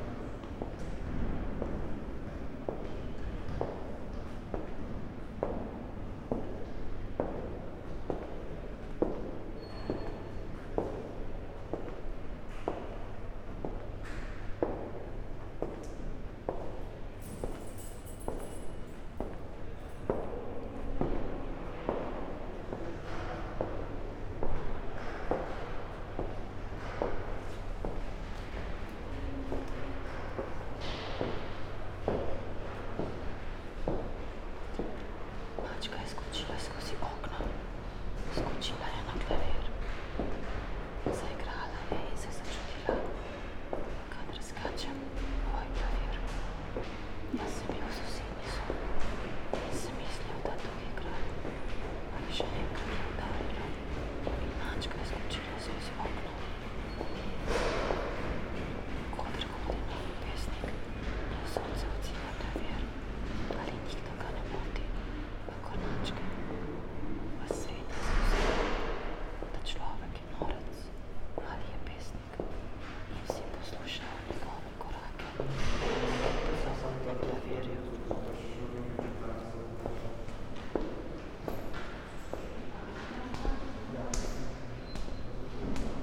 Narodna in Univerzitetna knjižnica, Ljubljana, Slovenia - Secret listening to Eurydice 6
first 6 min and 30 sec of one hour performance Secret listening to Eurydice 6, staircase of the entrance hall